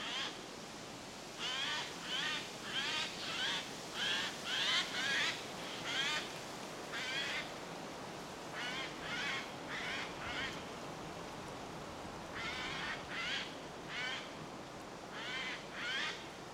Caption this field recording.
Windy day. Eurasian Jay in Vyzuonos biological reserve